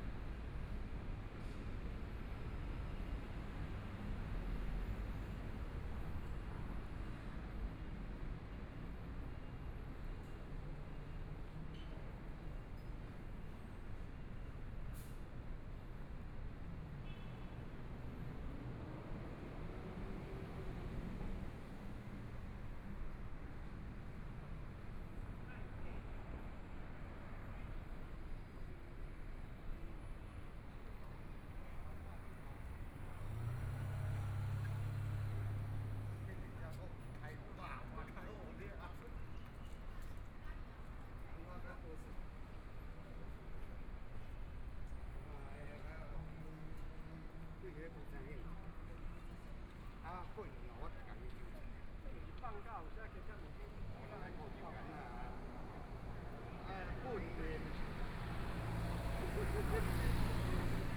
台北市中山區松江里 - In the Street
Environmental sounds, Walking In the Street, Motorcycle sound, Traffic Sound, Binaural recordings, Zoom H4n+ Soundman OKM II
Taipei City, Taiwan